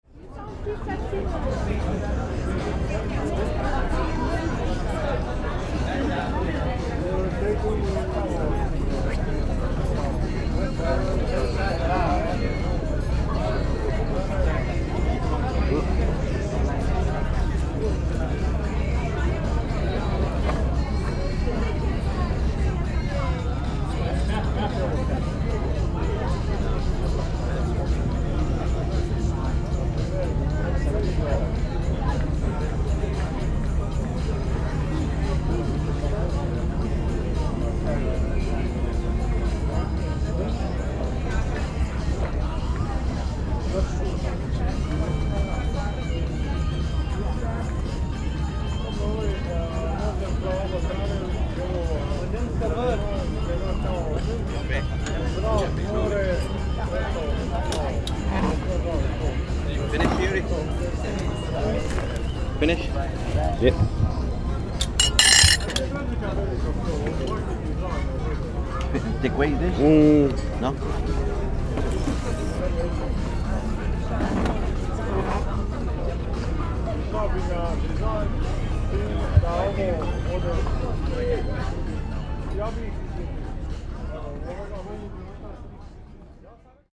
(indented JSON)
{
  "title": "Castello, Venezia, Italie - Tourists in Venice",
  "date": "2015-10-22 15:38:00",
  "description": "From a terrace restaurant in Venice, Zoom H6",
  "latitude": "45.43",
  "longitude": "12.35",
  "altitude": "1",
  "timezone": "Europe/Rome"
}